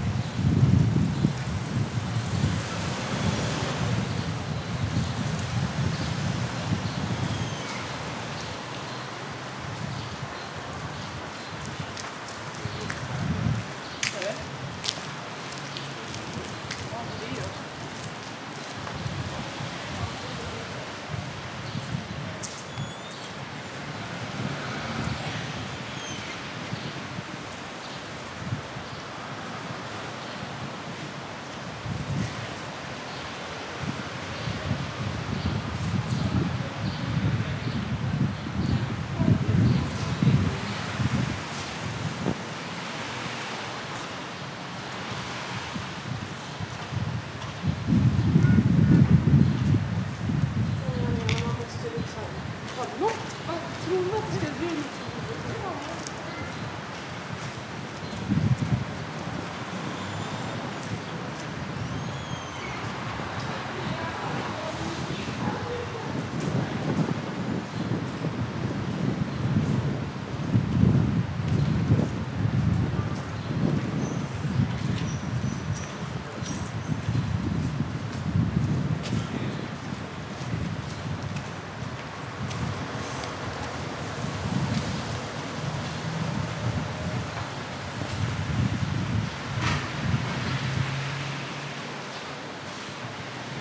people in the terrasse, walkers in the street, lunch time
Sollefteå, Sweden